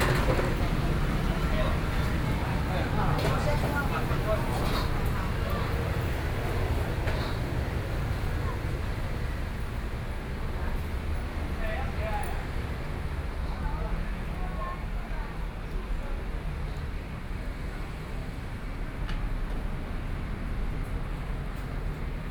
鼓山區惠安里, Kaohsiung City - soundwalk
walking on the Road, Traffic Sound, Various shops voices
Sony PCM D50+ Soundman OKM II